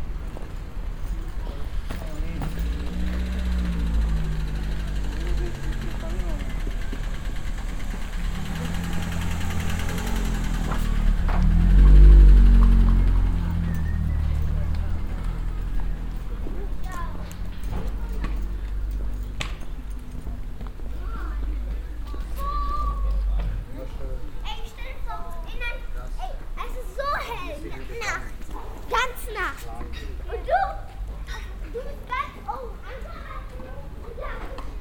walk over the place at the set up of the annual fall kermess in the center of the city
soundmap nrw - social ambiences and topographic field recordings